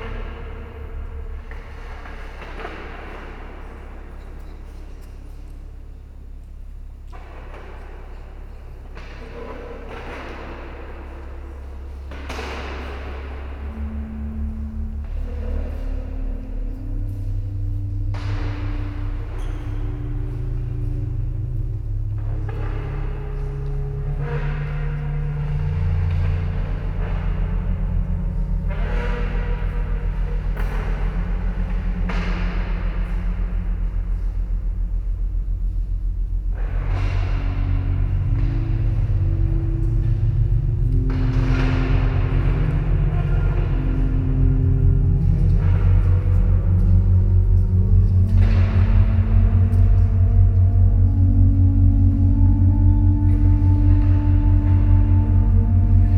Centre Nord, Dijon, France - Cathédrale Saint-Bénigne de Dijon
zoom H4 with SP-TFB-2 binaural microphones
20 May 2012